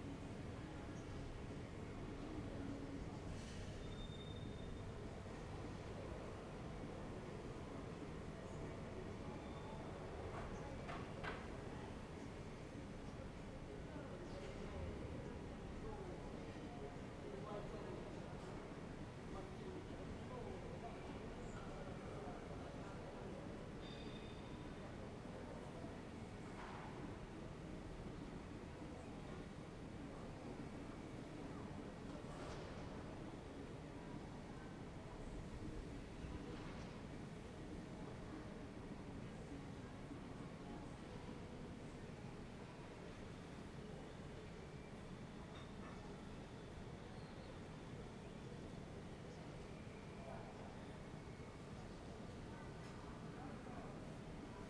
Ascolto il tuo cuore, città. I listen to your heart, city. Several chapters **SCROLL DOWN FOR ALL RECORDINGS** - Wednesday evening with birds and swallows in the time of COVID19 Soundscape
"Wednesday evening with birds and swallows in the time of COVID19" Soundscape
Chapter LXXXIX of Ascolto il tuo cuore, città, I listen to your heart, city.
Wednesday, May 27th 2020. Fixed position on an internal terrace at San Salvario district Turin, seventy-eight days after (but day twenty-four of Phase II and day eleven of Phase IIB and day five of Phase IIC) of emergency disposition due to the epidemic of COVID19.
Start at 8:05 p.m. end at 8:52 p.m. duration of recording 46’38”
Piemonte, Italia, 27 May 2020